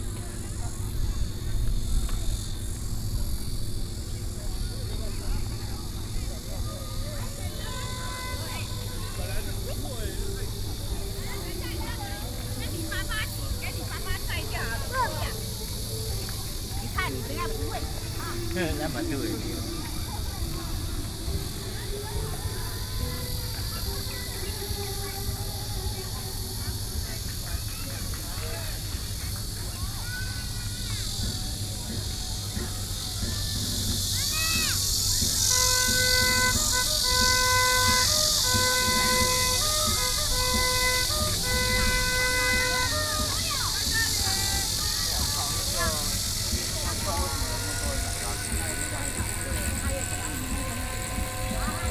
Bali Dist., New Taipei City - Walking along the river
Cicadas cry, Marina Park holiday, hot weather, Vendors selling ice cream
Sony PCM D50+ Soundman OKM II